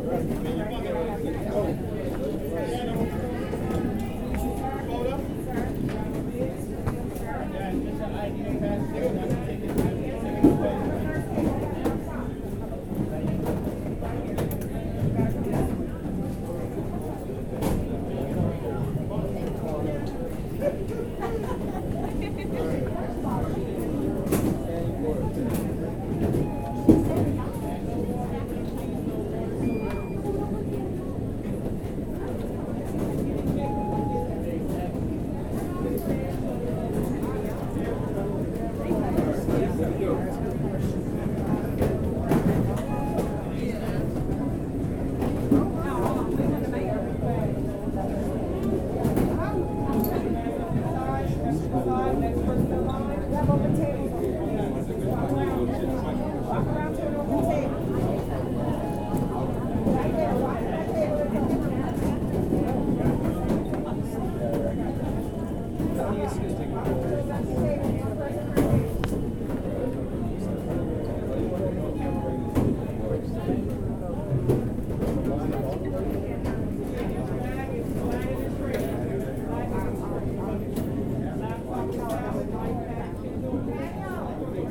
The crowded line of a security checkpoint at ATL. People snake their way through a series of posts and barriers and eventually arrive at the checkpoint itself. In addition to the people waiting in line, sounds from the nearby checkpoint can be heard here.
This recording was produced with the help of the Field Recorder app for android. The microphones used come stock with the Moto G7 Play; the application removes all digital processing, applies a chosen EQ curve, and ensures that the recording is taken in stereo (the microphones are on opposite ends of the phone body, resulting in excellent stereo separation).